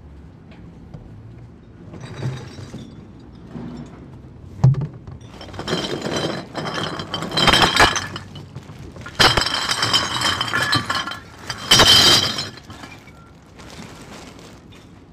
recycling beer bottles worth $13.77
1 August, 10:39